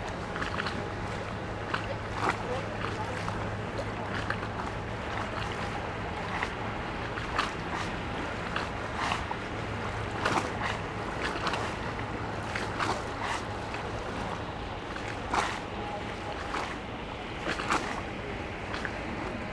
dahme river bank, lapping waves
the city, the country & me: october 3, 2015
3 October 2015, 18:40